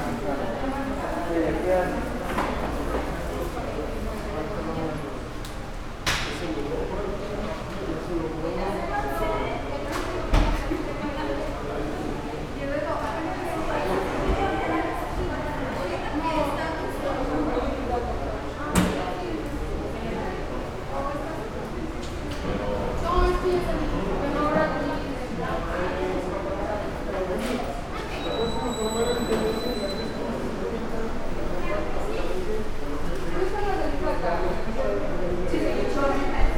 Calle Lunik #105 · 1er piso Consultorio No. 108 Torre II en Médica Campestre, Futurama Monterrey, León, Gto., Mexico - En la entrada del Hospital Médica Campestre.
At the entrance of the Hospital Medica Campestre.
I made this recording on september 3rd, 2022, at 12:13 p.m.
I used a Tascam DR-05X with its built-in microphones and a Tascam WS-11 windshield.
Original Recording:
Type: Stereo
Esta grabación la hice el 3 de septiembre 2022 a las 12:13 horas.
Guanajuato, México